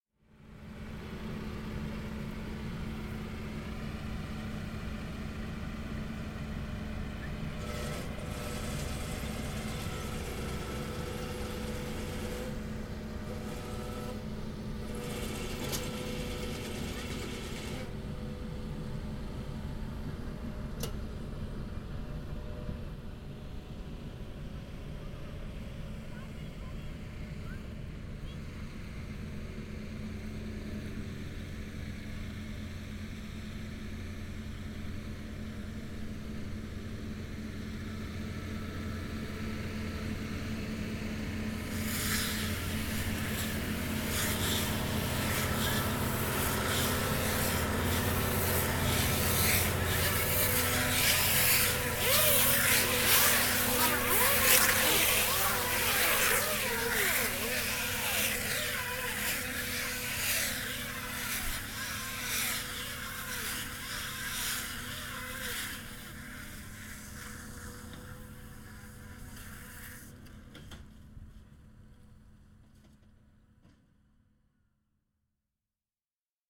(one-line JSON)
{"title": "Field, Amners Farm, Burghfield, UK - The baling machine", "date": "2017-05-06 12:59:00", "description": "This is the sound of the baling machine, which is a massive mechanism, mounted on a trailer, attached to a tractor. It lifts up a bale of straw and then tightly wraps it in plastic; the whirring sound is of the arms stretching and wrapping plastic tightly around the bale. It is a slightly terrifying and very noisy sound, but also I imagine this device to be essential when it comes time to bale up the straw.", "latitude": "51.42", "longitude": "-1.02", "altitude": "40", "timezone": "Europe/London"}